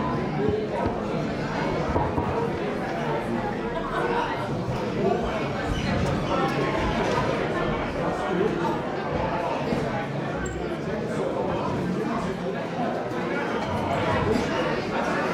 Kurhaus Dangast - cafe ambience
Kurhaus Dangast, popular cafe restaurant for weekenders
(Sony PCM D50, Primo EM172)